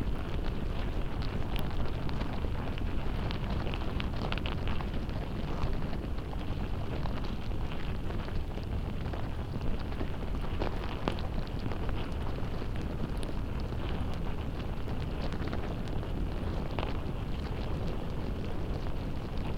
Mizarai, Lithuania, ant nest
through all my years of fieldrecording ants never stop to fascinate me. contact microphones
Alytaus apskritis, Lietuva, 9 September 2022, 11:30